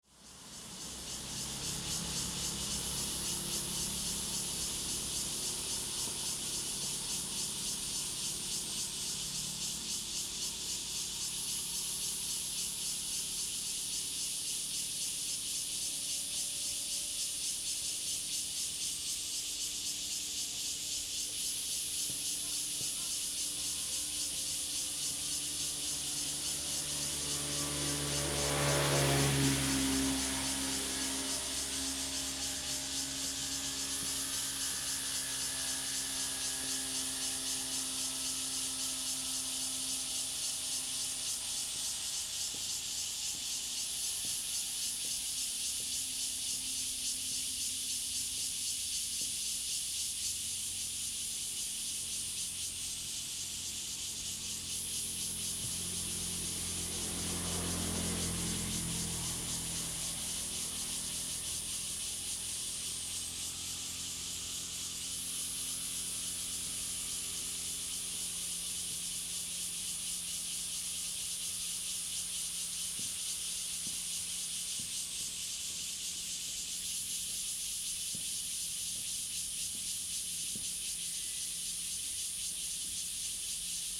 Wenquan Rd., Jhiben - Cicadas
Cicadas, Traffic Sound, The weather is very hot
Zoom H2n MS +XY